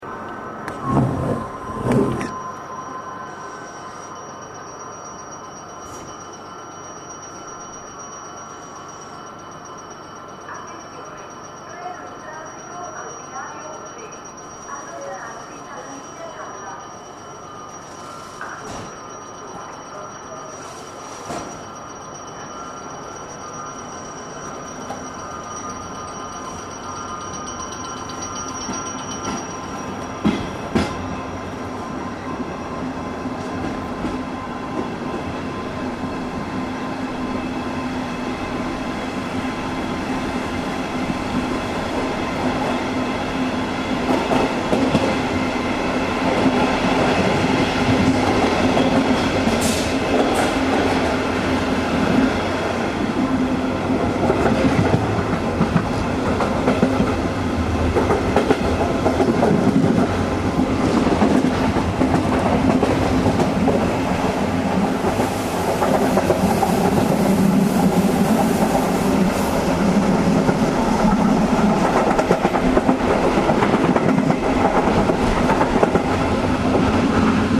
Night train Munich-Rome waiting to get back to ride down the southside of the Alps.
Franzensfeste Province of Bolzano-Bozen, Italy, 2 April 2011